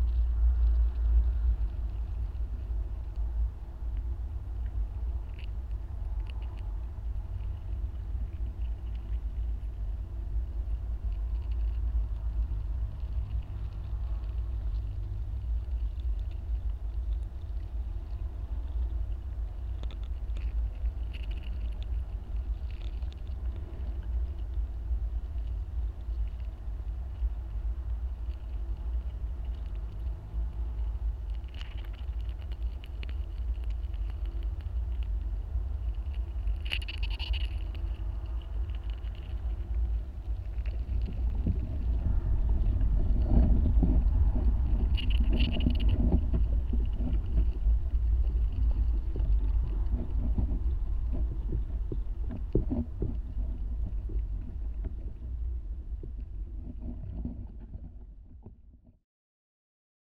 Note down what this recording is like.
recorded some big structure with contact mics and there were ants walking everywhere...and they...scream